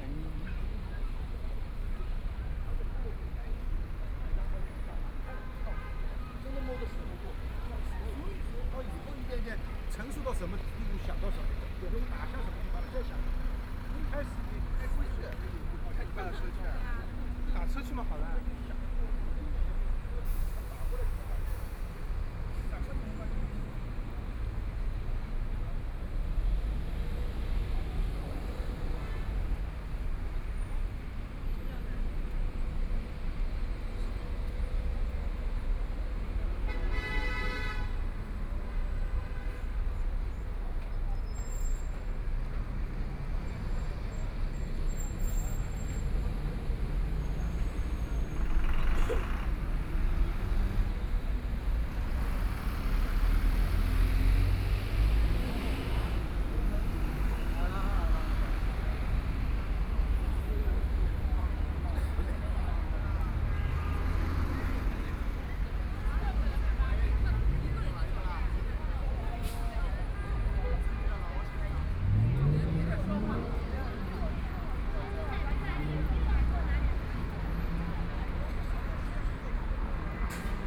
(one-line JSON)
{"title": "Fuzhou Road, Shanghai - soundwalk", "date": "2013-11-23 16:59:00", "description": "Walking in the street, Traffic Sound, Street, with moving pedestrians, Binaural recording, Zoom H6+ Soundman OKM II", "latitude": "31.23", "longitude": "121.47", "altitude": "15", "timezone": "Asia/Shanghai"}